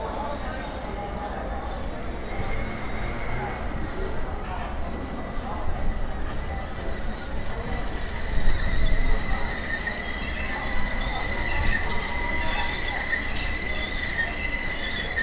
city castle, maribor - wind rattles